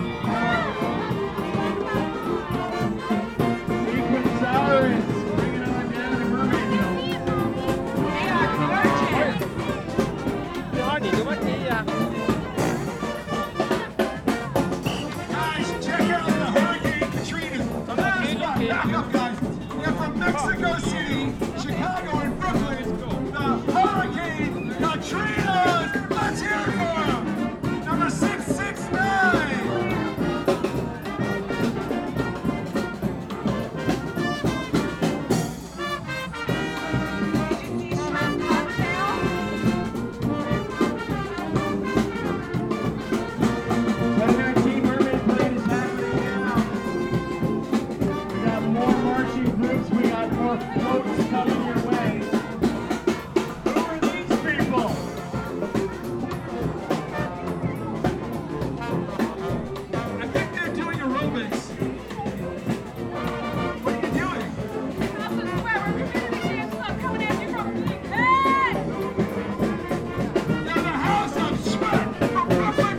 Surf Ave, Brooklyn, NY, USA - Coney Island Mermaid Parade, 2019
Coney Island Mermaid Parade, 2019
Zoom H6
22 June 2019, 14:31